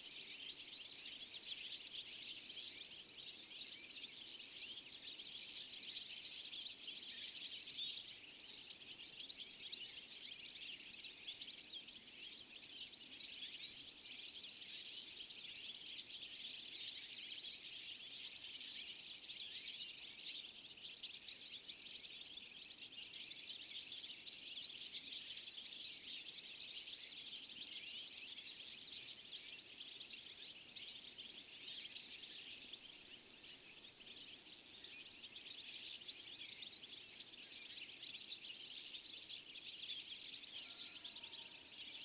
Lithuania, Kavoliai, birdie colony
little birds in the birch
7 October 2011